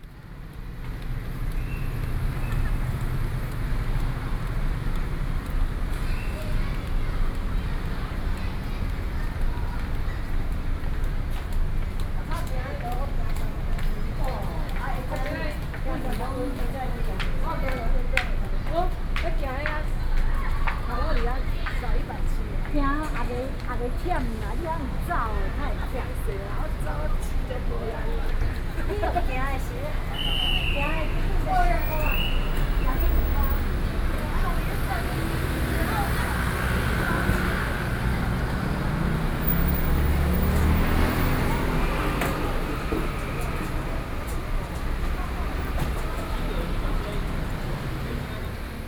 Taipei, Taiwan - in the street
Songshan District, Taipei City, Taiwan, 29 October 2012